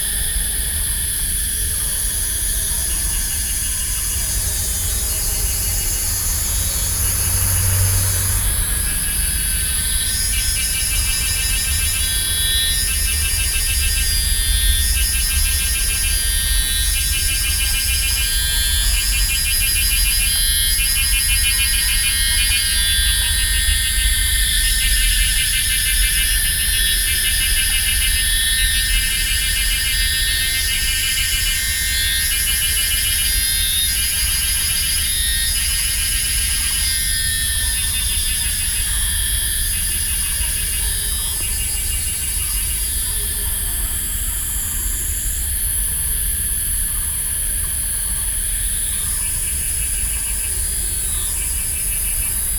Mínyì Road, Wugu District, New Taipei City - In the woods
New Taipei City, Taiwan, 3 July 2012